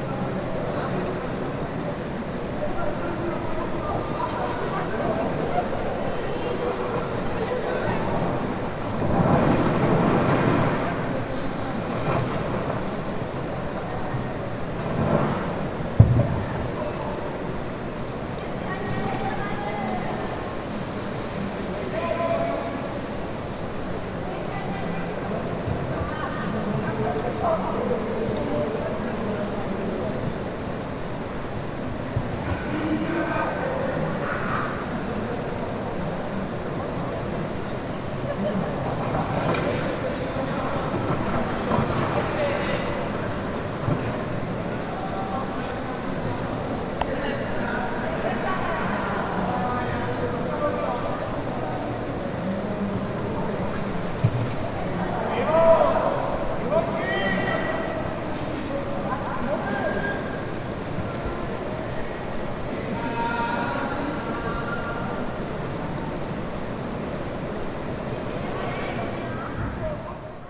Český Krumlov, Tschechische Republik - Soundscape Atelier Egon Schiele Art Centrum (3)
Soundscape Atelier Egon Schiele Art Centrum (3)